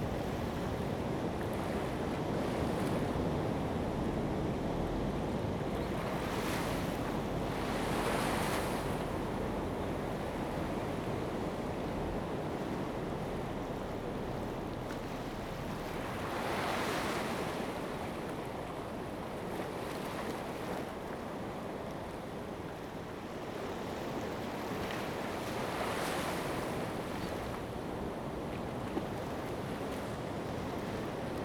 公舘村, Lüdao Township - Small pier
In the Small pier, sound of the waves
Zoom H2n MS +XY
Taitung County, Taiwan, 31 October